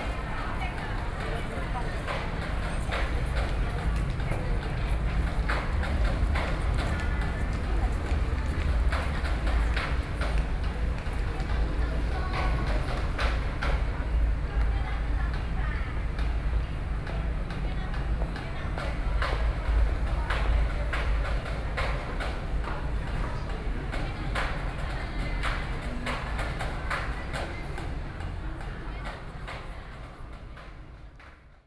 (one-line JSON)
{"title": "Chiang Kai-Shek Memorial Hall Station, Taipei - MRT entrance", "date": "2013-05-24 21:31:00", "description": "MRT entrance, Sony PCM D50 + Soundman OKM II", "latitude": "25.04", "longitude": "121.52", "altitude": "9", "timezone": "Asia/Taipei"}